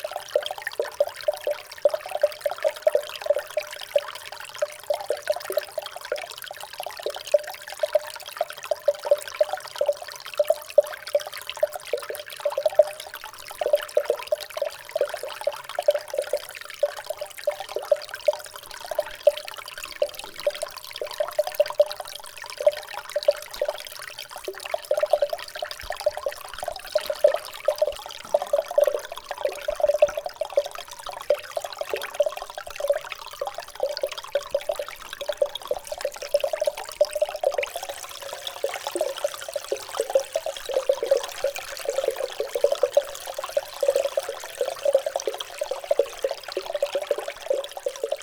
Differdange, Luxembourg - Concretion
In the underground mine, water flowing in a concretion makes a strange noise falling in a small hole.